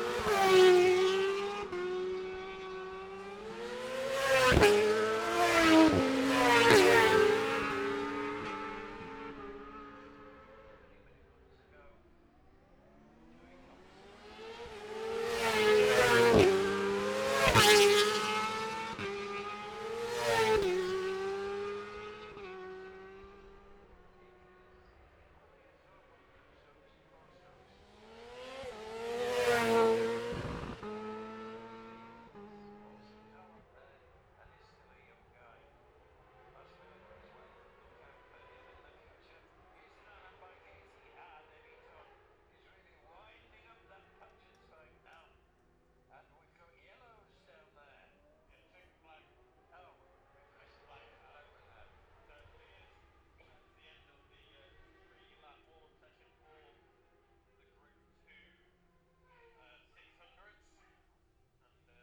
Jacksons Ln, Scarborough, UK - gold cup 2022 ... 600 group two practice ...
the steve henshaw gold cup 2022 ... 600 group two practice ... dpa 4060s on t-bar on tripod to zoom f6 ...